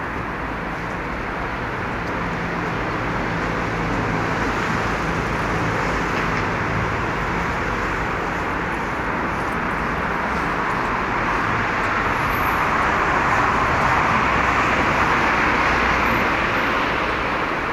Urbanstraße, Berlin, Deutschland - Soundwalk Urbanstrasse
Soundwalk: Along Urbanstrasse until Graefestrasse
Friday afternoon, sunny (0° - 3° degree)
Entlang der Urbanstrasse bis Graefestrasse
Freitag Nachmittag, sonnig (0° - 3° Grad)
Recorder / Aufnahmegerät: Zoom H2n
Mikrophones: Soundman OKM II Klassik solo